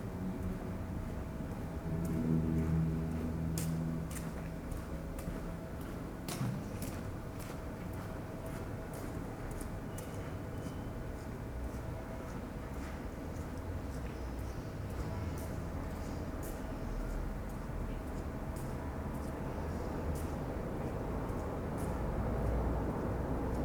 when the evening falls, every day, the dog follows with its barking the church bells.
Pavia, Italy